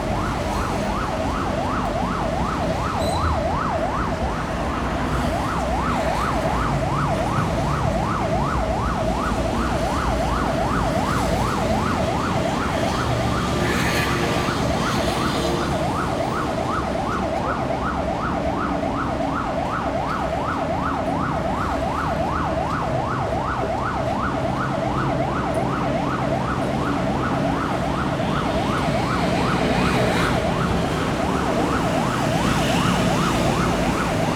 Traffic Sound
Zoom H4n +Rode NT4